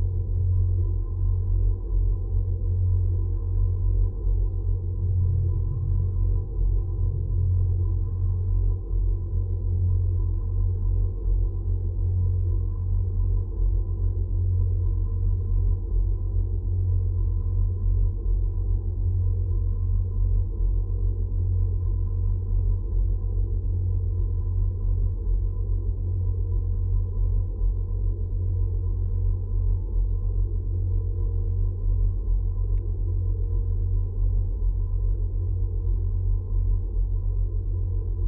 {"title": "Kupiskis, long rope drone", "date": "2017-06-24 13:20:00", "description": "contact microphones on long iron rope", "latitude": "55.85", "longitude": "24.98", "altitude": "76", "timezone": "Europe/Vilnius"}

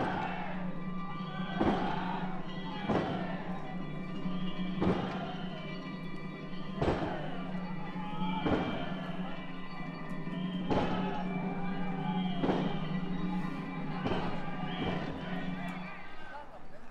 {"title": "Bandamachi, Tagawa, Fukuoka, Japan - Tagawa River Crossing Festival", "date": "2018-05-06 13:00:00", "description": "Descending the steps of the shrine to where the floats are assembled.", "latitude": "33.64", "longitude": "130.82", "altitude": "30", "timezone": "Asia/Tokyo"}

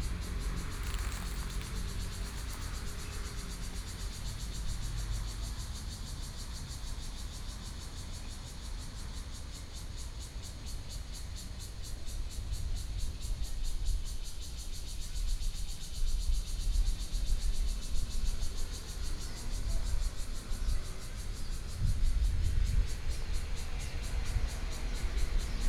{"title": "Zhonghua Rd., 花蓮市主權里 - Small park", "date": "2014-08-29 09:09:00", "description": "In large trees, Traffic Sound, Cicadas sound, Fighter flying through", "latitude": "23.97", "longitude": "121.59", "altitude": "23", "timezone": "Asia/Taipei"}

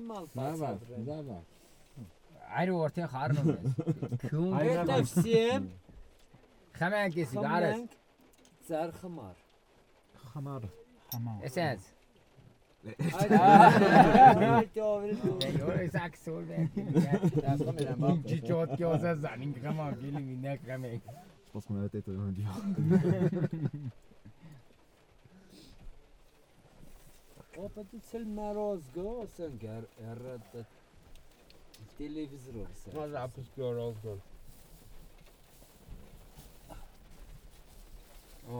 {"title": "Erablur, Arménie - Farmers and the terrible Samo", "date": "2018-09-04 15:00:00", "description": "A violent storm went on us. We saw a 4x4 car driving, the owner was searching us. In aim to protect us from the rain, he placed us in a small caravan, inside we were 11 persons ! It was so small that my feet were on another person. As Armenia is like this, these farmers shared with us vodka, cheese, tomatoes, cognac and coffee. This is the recording of the time we spent with them. The terrible farmer called Samo is speaking so loud ! It's a very friendly guy.", "latitude": "40.39", "longitude": "45.02", "altitude": "2225", "timezone": "Asia/Yerevan"}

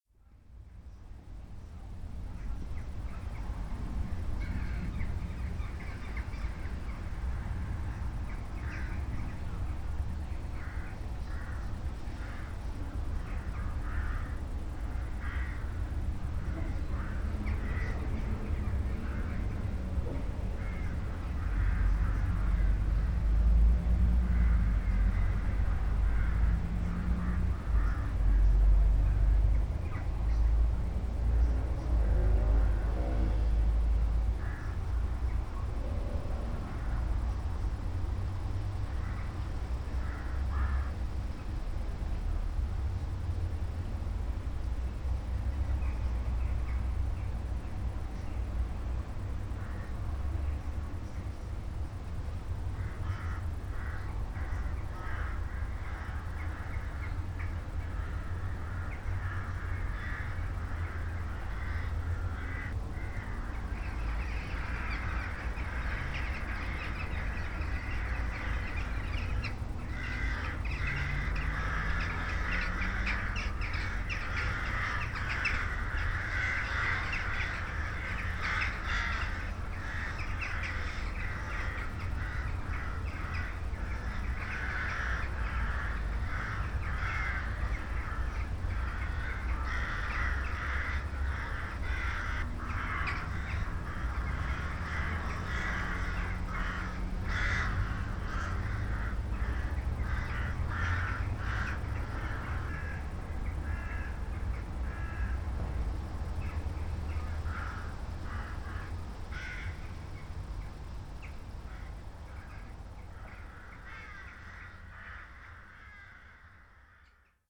{"title": "St. Peter-Ording, Sankt Peter-Ording, Germany - crows at night kraehen am spaeten abend", "date": "2014-08-08 23:06:00", "description": "ein kraehenschwarm am abendhimmel / a swarm of crows at night sky", "latitude": "54.30", "longitude": "8.65", "altitude": "5", "timezone": "Europe/Berlin"}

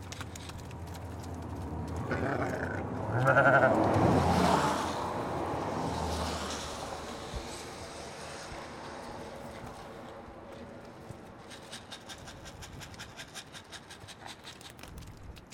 {"title": "Fawcett Forest, Cumbria, UK - Brian Knowles's Hoggs (one year old ewes)", "date": "2012-01-04 10:40:00", "description": "This is the sound of Brian Knowles's one year old ewes. The field is wet and very close to a main road; you can hear the traffic travelling by very close and at high speed. Brian also explains what a hogg hole is, i.e. a special hole created in a wall which sheep can use to move between fields.", "latitude": "54.42", "longitude": "-2.70", "altitude": "265", "timezone": "Europe/London"}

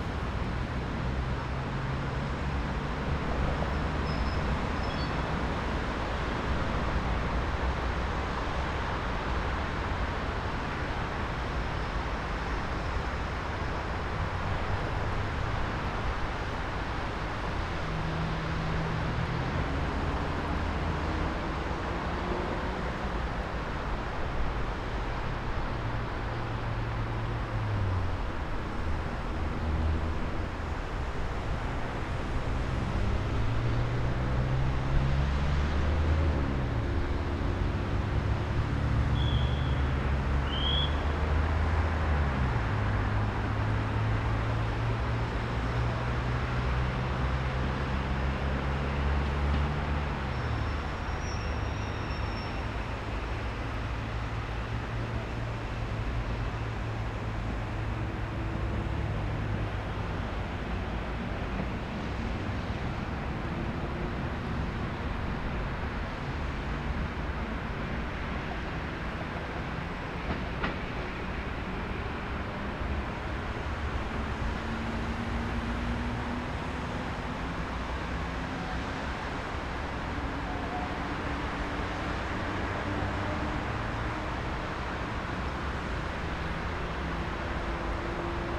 {"title": "Olsztyn, Polska - West train station (2)", "date": "2013-02-05 15:51:00", "description": "Train arrival in the middle. City rush. Snow is melting.", "latitude": "53.78", "longitude": "20.47", "altitude": "113", "timezone": "Europe/Warsaw"}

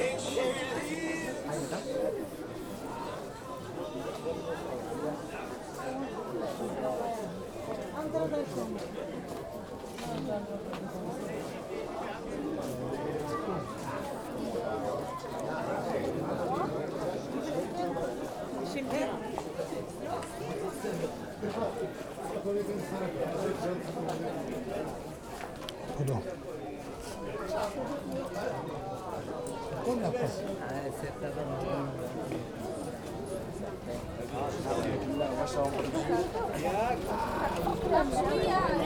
{"title": "Medina, Marrakesch - lost for a while", "date": "2014-02-26 17:05:00", "description": "seems I had to make the quite common experience of getting lost in the Medina of Marrakech. then the sun went down and the battery of my phone too, no gps and maps to navigate. it took a while, with mixed feelings.", "latitude": "31.63", "longitude": "-7.99", "timezone": "Africa/Casablanca"}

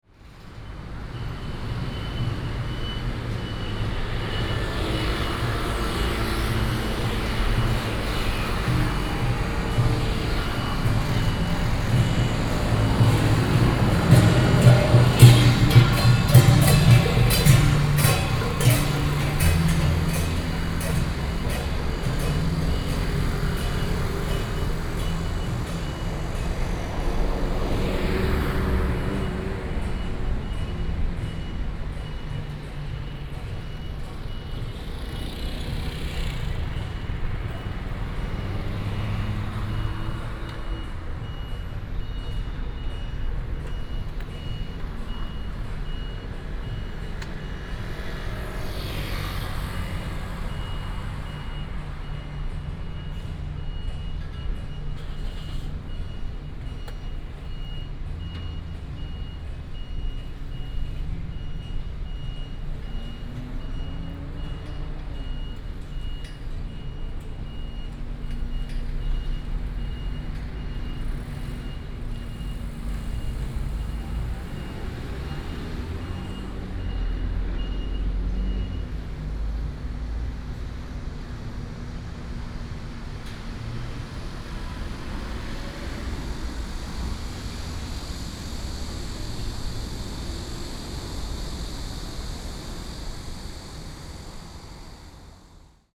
{"title": "Sec., Guoji Rd., Taoyuan Dist. - Traffic Sound", "date": "2017-07-18 16:11:00", "description": "Traffic Sound, Funeral team, Traffic Sound, Cicada", "latitude": "25.00", "longitude": "121.29", "altitude": "95", "timezone": "Asia/Taipei"}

